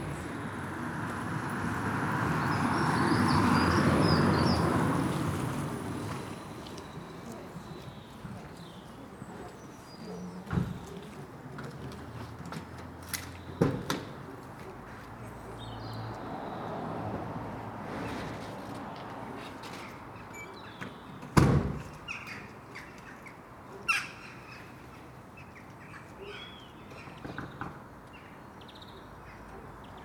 The Drive Westfield Drive Fernville Road Kenton Road Montague Avenue Wilson Gardens
Rotted gatepost
front wall pointing gone
dunnock singing
Electric van
delivering brown parcels
that are green
Gull cry ‘daw chack
bin thump
finch and electric van wheeze